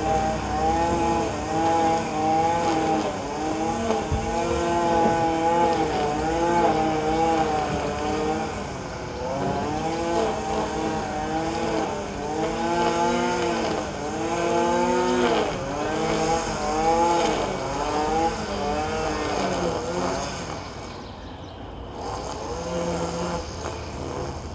Halesworth market town; sounds of summer through the attic skylight - Rural loud, strimmer at work